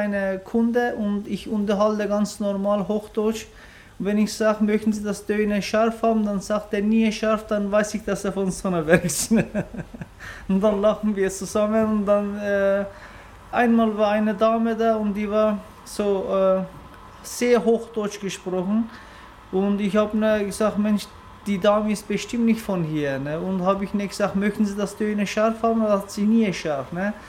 neustadt bei coburg - gewerbegebiet
Produktion: Deutschlandradio Kultur/Norddeutscher Rundfunk 2009